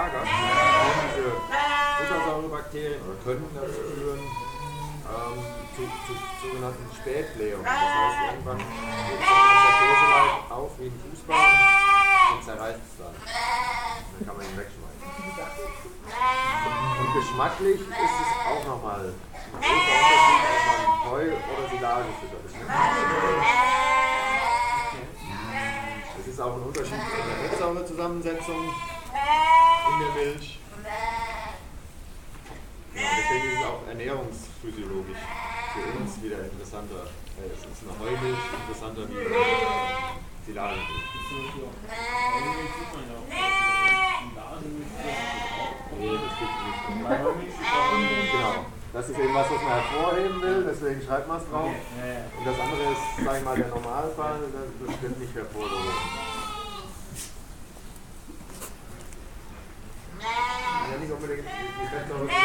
Altenberge, Germany - the advantages of hay milk...
…the farmer of “Entrup119” tells us about cheese production and the advantages of feeding hay to the sheep… while a multi-vocal choir of sheep is musically accompanying the farmer’s speech…
…we were visiting the farm as part of a workshop from a “one world conference”
14 March 2015, ~15:00